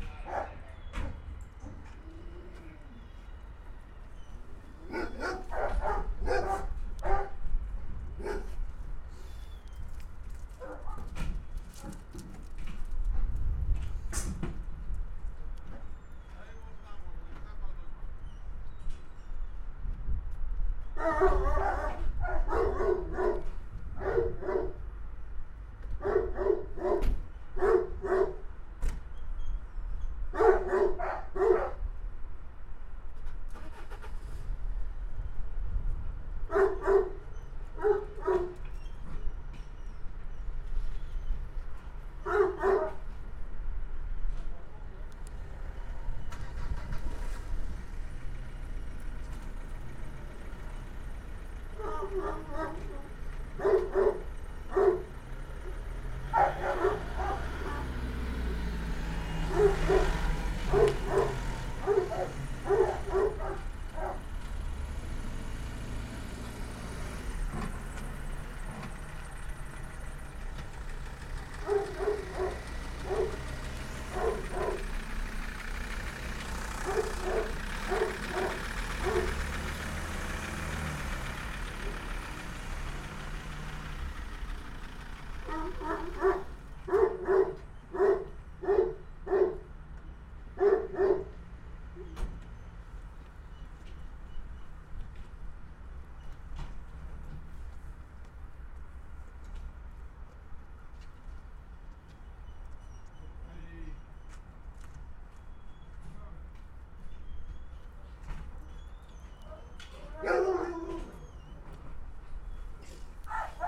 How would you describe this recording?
tractor (pneumatic pump) and dogs, walkie talkie and more. Recorded with binaural DPA mics and Edirol R-44